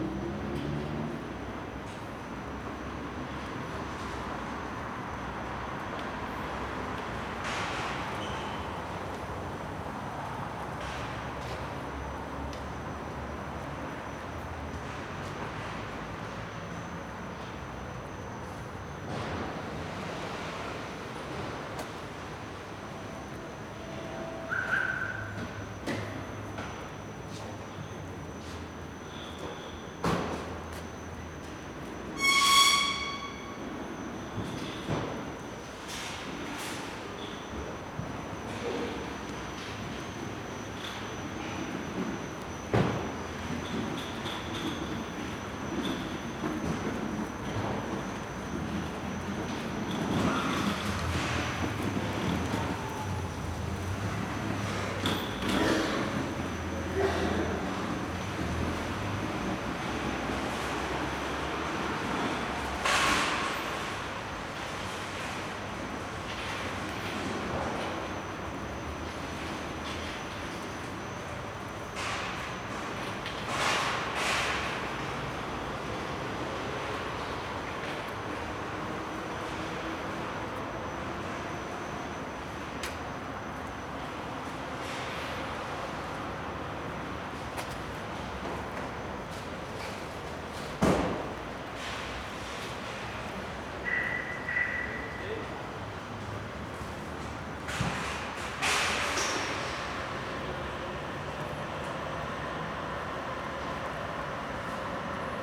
Poznan, Piatkowo district, parking lot of Makro wholesale outlet - parking lot
walking around cars the parking lot. shoppers moving around with shopping trolleys, unloading goods, cars arriving and leaving, phone conversations.
Poznan, Poland, 13 March